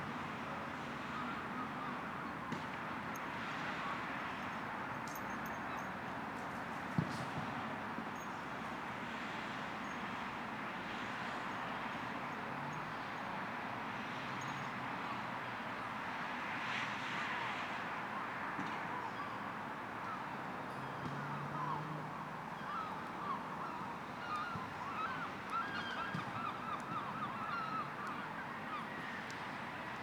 Courtenay Park, Newton Abbot, Devon, UK - World Listening Day 2014
Evening sounds in Courtenay Park, Newton Abbot Devon. Traffic, children playing, dogs running after balls, swifts, herring gulls, bees, voices ...
18 July 2014, 08:30